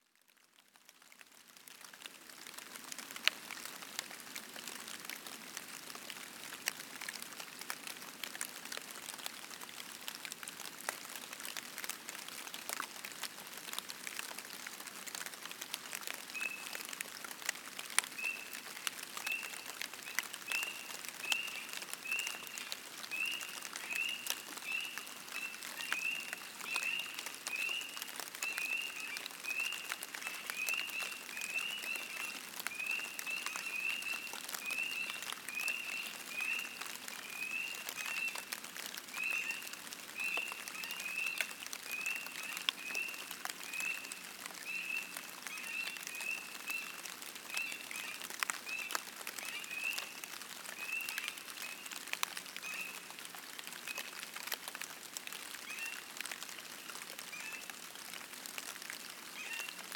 Lunsford Corner, pond, Arkansas, USA - Spring Peepers (frogs) at Lunsford Pond
Recorded at 2 am at Lunsford Corner pond, near Lake Maumelle, central Arkansas, USA. Rain falling. The pond is about 10 feet from the microphones, which were mounted on a tree and left to record overnight. Excerpt from 14 hour recording. Microphones: Lom MikroUsi pair. Recorder: Sony A10.
23 February 2020, 02:00